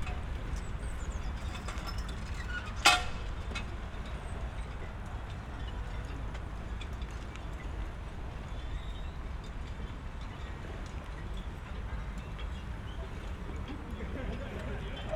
marina, Berlin Wannsee - ringing rig, marina ambience
marina, Wannsee, Berlin. sailboat's rig is ringing, people try to lift boat into the water by a small crane
(SD702, BP4025)
Berlin, Germany